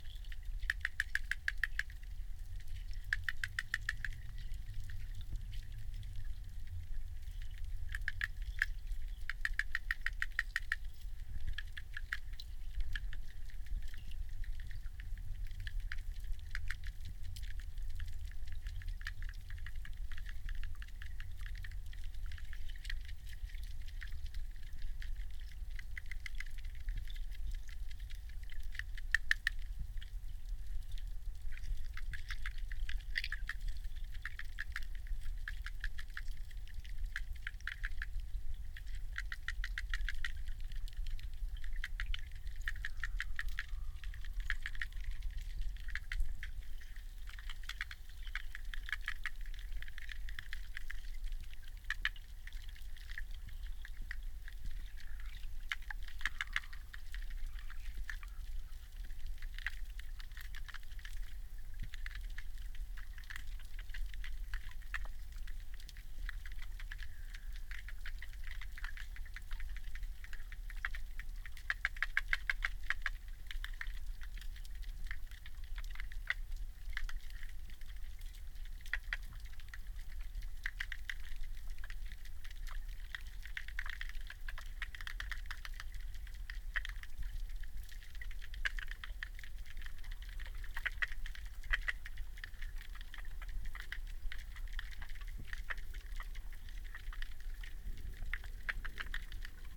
Meramec River, Ballwin, Missouri, USA - Meramec River Hydrophone
Hydrophone recording of Meramec River while floating in river
Saint Louis County, Missouri, United States, 22 August 2021